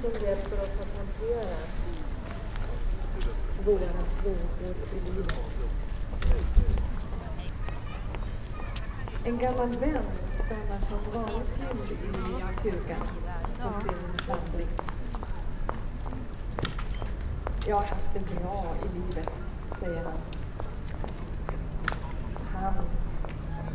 {
  "title": "Town Hall. Umeå. Bells and Glashuset",
  "date": "2011-02-10 11:59:00",
  "description": "12pm Town Hall bells followed by live event in the Glashuset situated in the main square.",
  "latitude": "63.83",
  "longitude": "20.26",
  "altitude": "24",
  "timezone": "Europe/Stockholm"
}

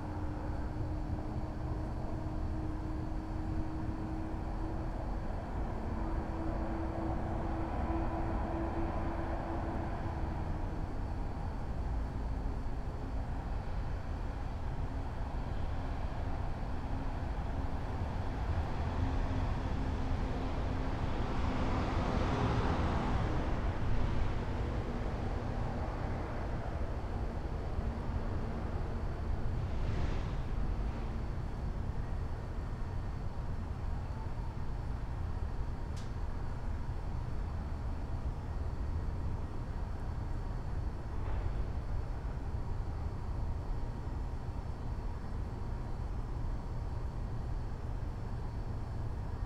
{
  "title": "Gunter St, Austin, TX, USA - Shelter in Place",
  "date": "2020-03-24 23:30:00",
  "description": "Recorded with Sound Devices 633 and Lom USIs",
  "latitude": "30.26",
  "longitude": "-97.70",
  "altitude": "143",
  "timezone": "America/Chicago"
}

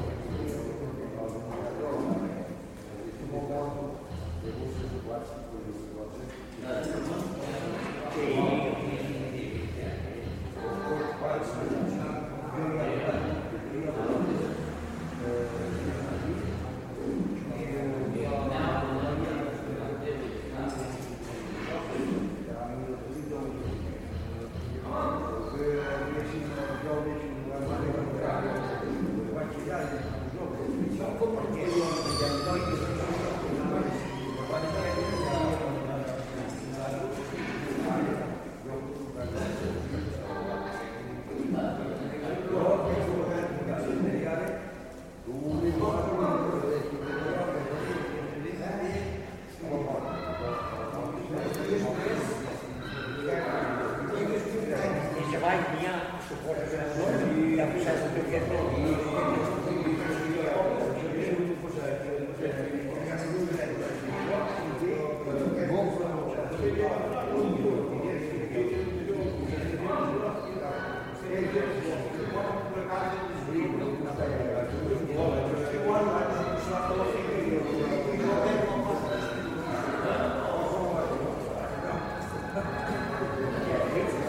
{
  "title": "Sant Feliu de Guíxols, Espagne - gran café",
  "date": "1997-02-09 10:05:00",
  "description": "This is a recording of the ambiance in a grand cafe at San Filiu de Guixols. In the first part we can hear voices filling the space, & almost feel them sounding with the woody matter of the room. In the second part some electronic sounds of a machine gradually invade the environment & the voices seem to be less at ease or even shut. This is to illustrate an aspect of the recent evolution of our sound environment.",
  "latitude": "41.78",
  "longitude": "3.03",
  "altitude": "13",
  "timezone": "Europe/Madrid"
}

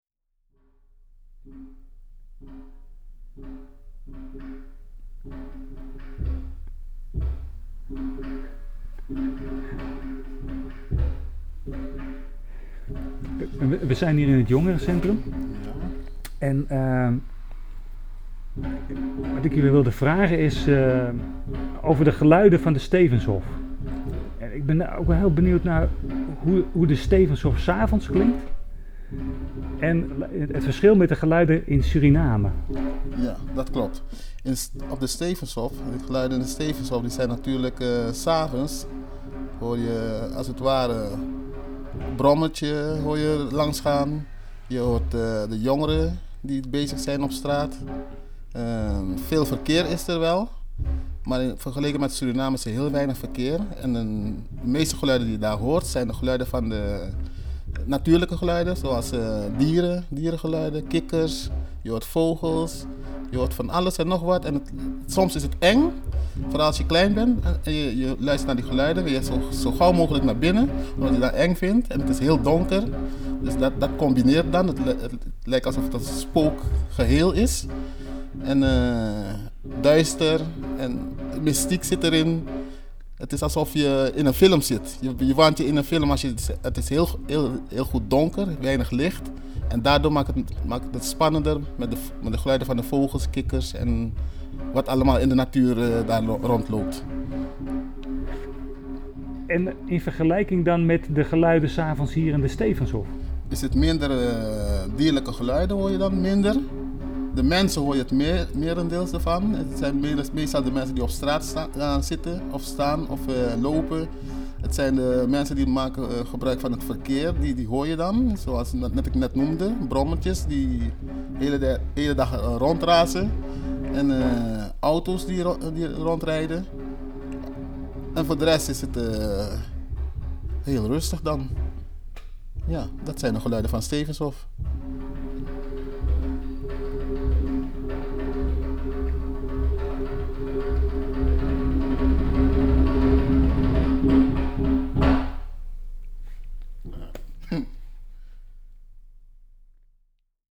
{
  "title": "ongerenwerker Marlon vertelt over de geluiden in de avond",
  "date": "2011-09-09 16:01:00",
  "description": "Marlon vertelt over de geluiden van de avond in de Stevenshof en in Suriname, jembe op achtergrond in andere ruimte",
  "latitude": "52.15",
  "longitude": "4.45",
  "timezone": "Europe/Amsterdam"
}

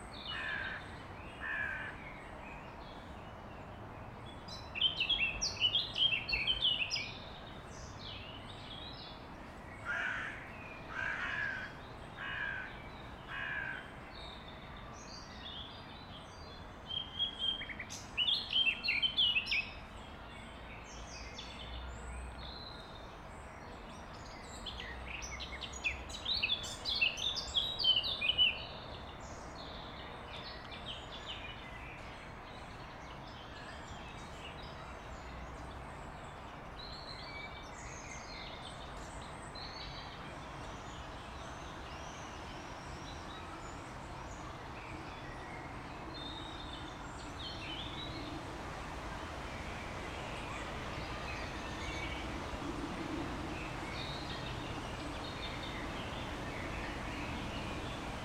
{
  "title": "Am Friedrichshain, Berlin, Germany - BIRDS IN PARK - Volkspark - BIRDS IN PARK - Volkspark - Berlin",
  "date": "2018-05-27 13:15:00",
  "description": "Park ambience with birds. Recorded with a AT BP4025 (XY stereo) into a SD mixpre6.",
  "latitude": "52.53",
  "longitude": "13.43",
  "altitude": "52",
  "timezone": "Europe/Berlin"
}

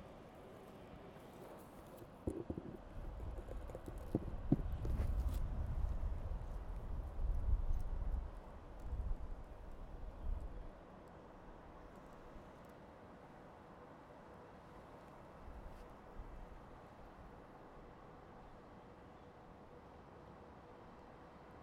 {
  "title": "вулиця Гліба Успенського, Вінниця, Вінницька область, Україна - Alley12,7sound10Roshenplant",
  "date": "2020-06-27 12:22:00",
  "description": "Ukraine / Vinnytsia / project Alley 12,7 / sound #10 / Roshen plant",
  "latitude": "49.23",
  "longitude": "28.49",
  "altitude": "231",
  "timezone": "Europe/Kiev"
}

